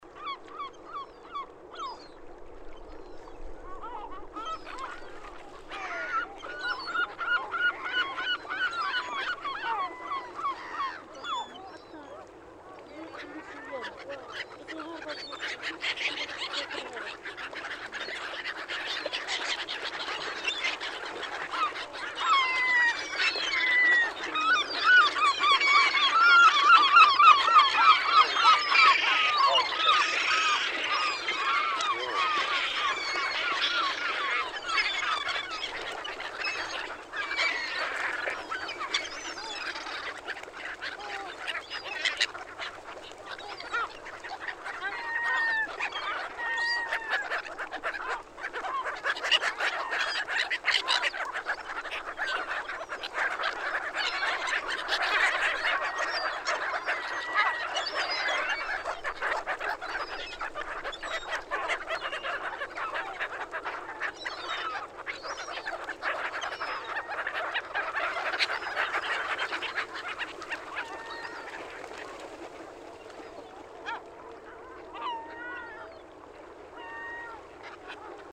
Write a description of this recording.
Seaguls at aarhus harbour, stereo shot gun